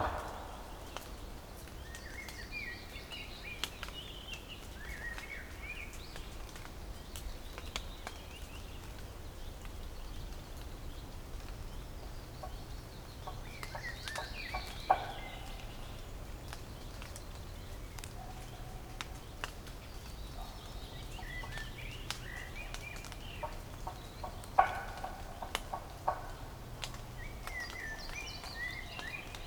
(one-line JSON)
{"title": "Sasino, forest - fire after rain", "date": "2013-06-28 18:24:00", "description": "forest ambience after heavy rain, lots of fat drops sliding down from the leaves. water splashing on the ground, branches, moss but the sonic sensation was similar to one sitting in front of a campfire due to the dynamic crackling.", "latitude": "54.76", "longitude": "17.74", "altitude": "27", "timezone": "Europe/Warsaw"}